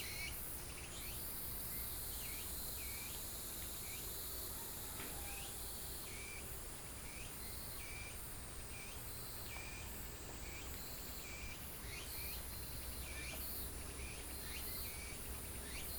綠屋民宿, 埔里鎮桃米里 - Birdsong
Birdsong, at the Hostel
Nantou County, Taiwan, August 2015